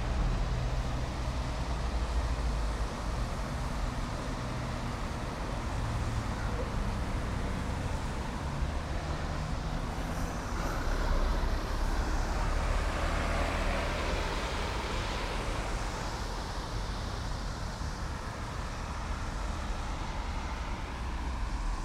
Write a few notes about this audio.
Cars driving round the roundabout.